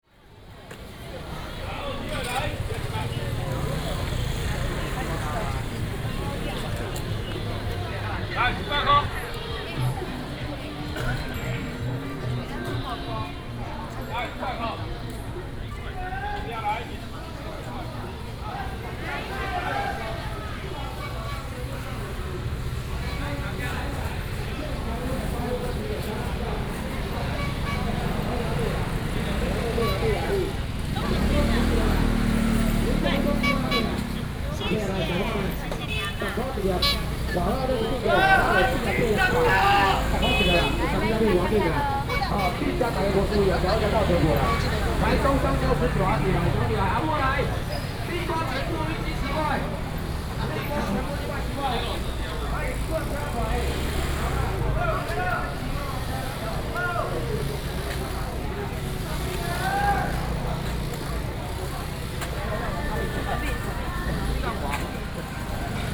Datong Rd., Bade Dist., Taoyuan City - traditional market
in the traditional market, vendors selling sound, traffic sound
Taoyuan City, Taiwan, January 2018